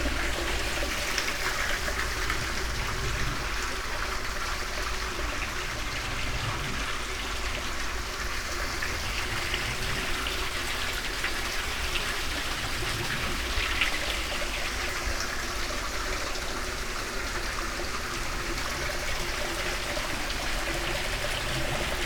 water well, Studenci, Maribor - high waters, breathing murmur
rain through summer and early autumn, everything swollen, as waters are high breathing of this concrete well is audible
Maribor, Slovenia, 16 September 2014, 19:01